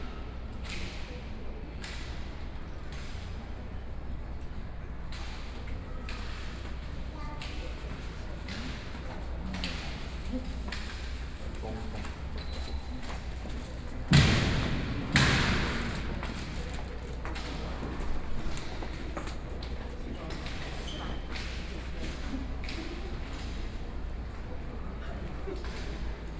Sun Yat-Sen Memorial Hall - Guard ceremony
In the hall of the Guard ceremony, Sony PCM D50 + Soundman OKM II